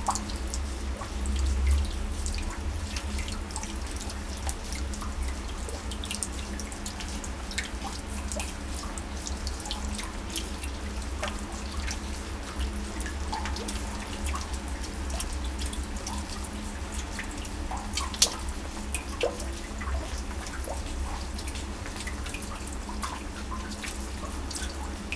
recorder held in a massive metal pitcher used for collecting rain water. it resonates beautifully when rain drops hit the surface of the water. (roland r-07)
22 September 2018, 14:45